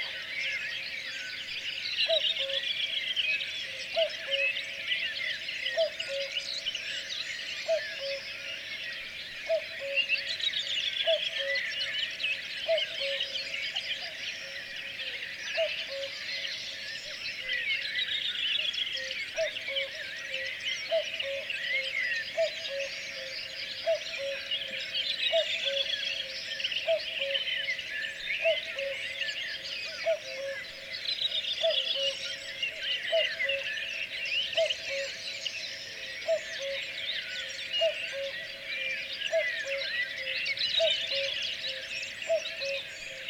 {
  "title": "morning bird activity at Konnu",
  "date": "2010-06-17 01:56:00",
  "description": "deep echo from a cuckoo bird",
  "latitude": "58.27",
  "longitude": "27.19",
  "altitude": "39",
  "timezone": "Europe/Tallinn"
}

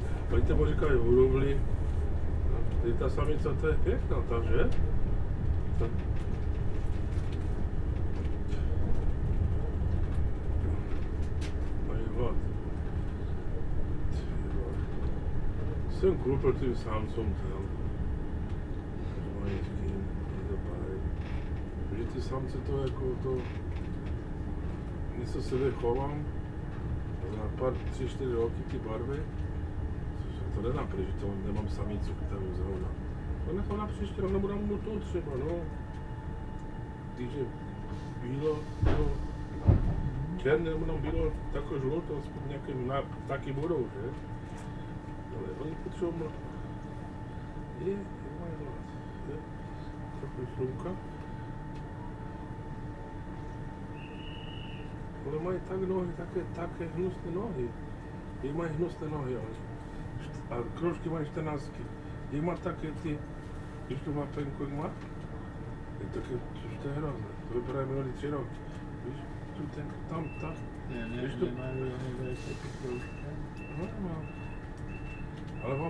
{
  "title": "On the train EC 104 'Sobieski, somewhere between Přerov and Ostrava - Slezské holubáři na cestě / Silesian pigeon fanciers on a journey",
  "date": "2015-02-08 10:40:00",
  "description": "In Přerov two senior pigeon fanciers hopped on my train and started a whimsical chit-chat about their mutual passion in Silesian dialect. What a wonderful intervention into the bland, airplane-like setting of EC 104 'Sobieski', provided by two truly regional characters, breeding genuine ambassadors of a world without borders",
  "latitude": "49.72",
  "longitude": "18.10",
  "altitude": "227",
  "timezone": "Europe/Prague"
}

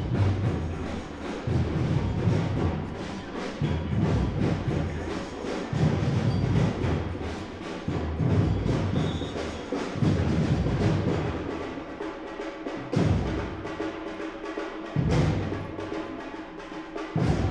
{
  "title": "Altstadt-Nord, Köln, Germany - drummer performance",
  "date": "2016-04-01 13:40:00",
  "description": "Köln Hauptbahnhof, main station, a group of drummers celebrating a wedding or smtg.\n(Sony PCM D50, Primo EM172)",
  "latitude": "50.94",
  "longitude": "6.96",
  "altitude": "60",
  "timezone": "Europe/Berlin"
}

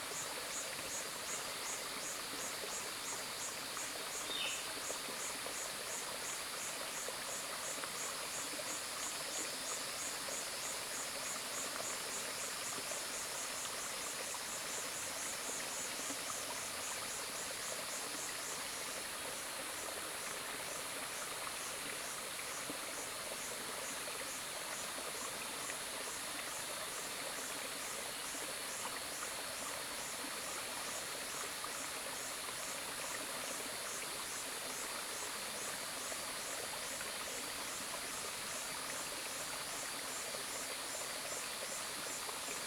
{
  "title": "Hualong Ln., 埔里鎮成功里 - Headwaters of the river",
  "date": "2016-06-08 07:28:00",
  "description": "Cicada sounds, Bird sounds, stream, Headwaters of the river\nZoom H2n MS+XY",
  "latitude": "23.93",
  "longitude": "120.88",
  "altitude": "669",
  "timezone": "Asia/Taipei"
}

